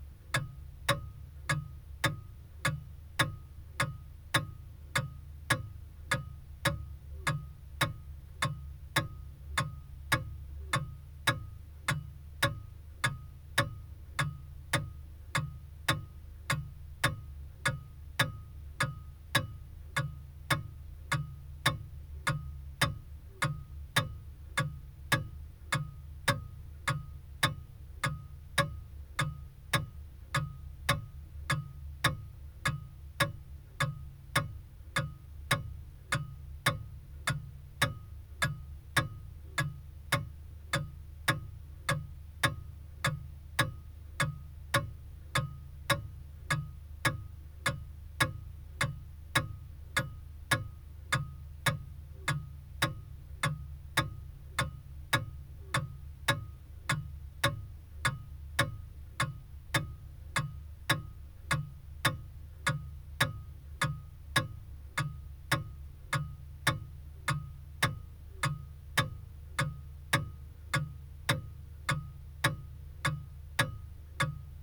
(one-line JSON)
{"title": "Meadow Way, Didcot, UK - pendulum wall clock ...", "date": "2021-05-07 06:00:00", "description": "pendulum wall clock ... olympus ls 14 integral mics ... inside the casing with the door shut ... the clock is possibly 100+ years old ... recorded on possibly my last visit to the house ...", "latitude": "51.60", "longitude": "-1.26", "altitude": "74", "timezone": "Europe/London"}